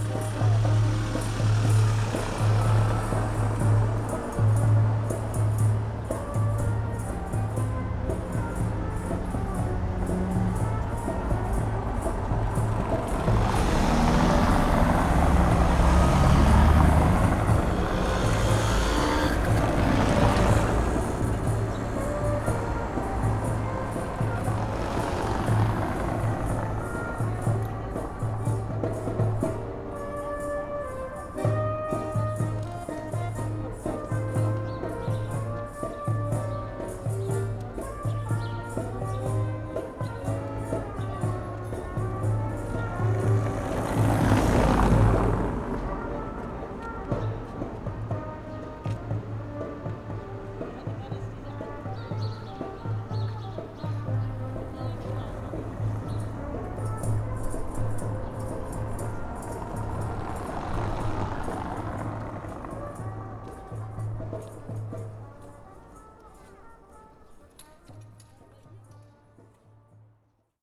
musicians performing along the street
the city, the country & me: march 27, 2011